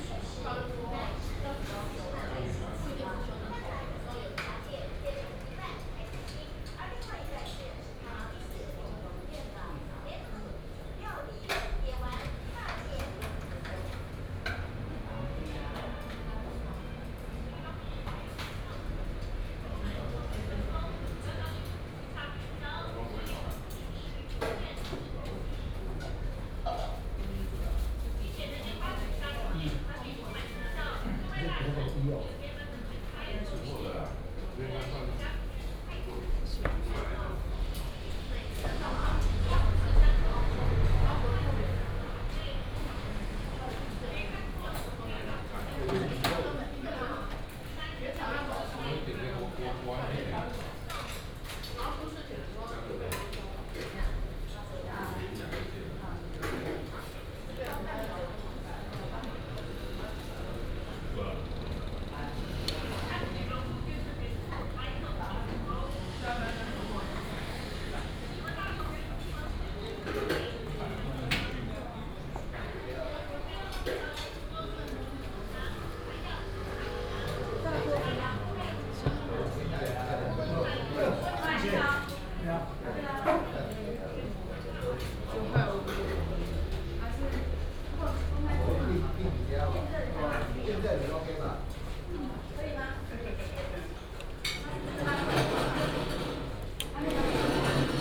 廟口牛肉麵, Xihu Township - In the beef noodle shop
In the beef noodle shop, Traffic sound